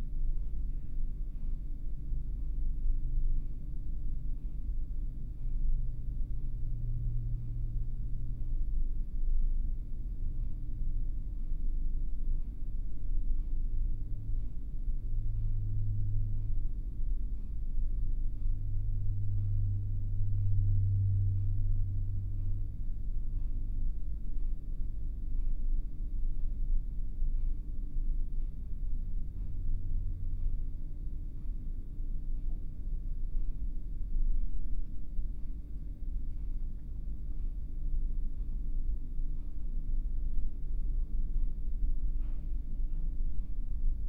Buckley Building, Headington Rd, Oxford, UK - Wellbeing Centre Meditation
A 20 minute meditation in the quiet/prayer room of the Wellbeing Centre at Oxford Brookes University (Pair of Sennheiser 8020s either side of a Jecklin Disk recorded on a SD MixPre6).
14 September 2017, 12:15